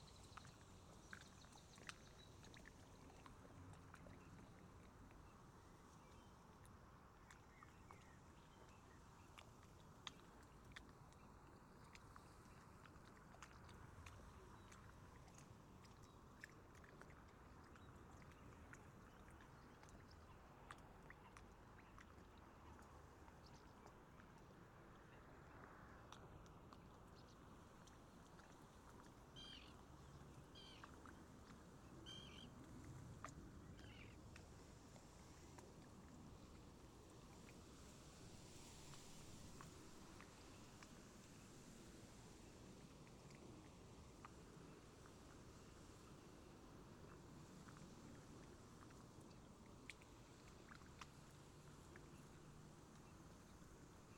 Poelestein, Abcoude, Netherlands - Lakeside Abcoude
Originally recorded with SPS200 A-Format microphone. Afterwards decoded to binaural format for listening purposes. Soft lapping of little waves against the shore. Distant highway.
July 2018